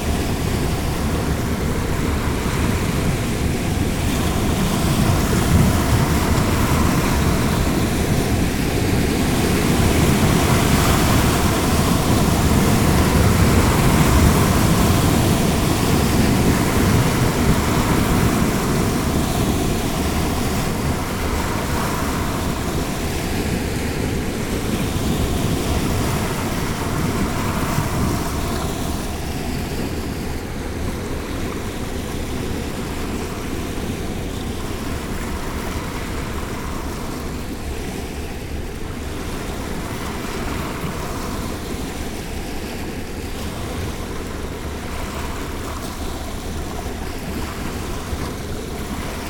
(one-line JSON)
{"title": "Grand-Couronne, France - Boat on the Seine river", "date": "2016-09-18 22:00:00", "description": "By night, the Viking Kadlin boat is passing by on the Seine river.", "latitude": "49.36", "longitude": "0.98", "altitude": "3", "timezone": "Europe/Paris"}